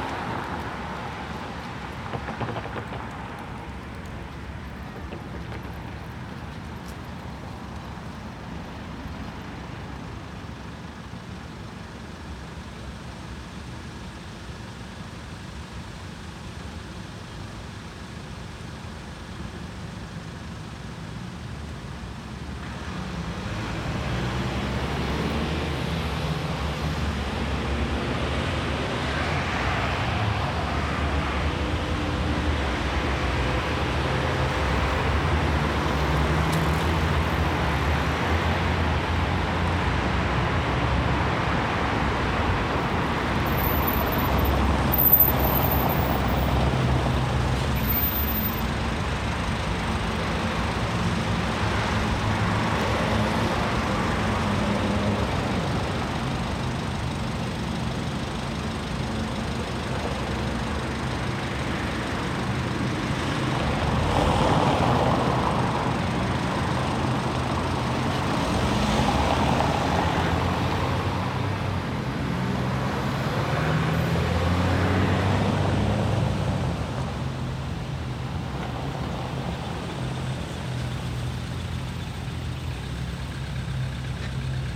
Osloer Straße, Soldiner Kiez, Wedding, Berlin, Deutschland - Osloer Straße at the corner of Grüntaler Straße - Intersection with heavy traffic
Osloer Straße at the corner of Grüntaler Straße - Intersection with heavy traffic. Osloer Straße has four lanes plus two tramway lanes in the middle.
[I used the Hi-MD-recorder Sony MZ-NH900 with external microphone Beyerdynamic MCE 82]
Osloer Ecke Grüntaler Straße - Vielbefahrene Kreuzung. Die Osloer Straße hat vier Fahrspuren zuzüglich zweier Tramgleise in der Mitte.
[Aufgenommen mit Hi-MD-recorder Sony MZ-NH900 und externem Mikrophon Beyerdynamic MCE 82]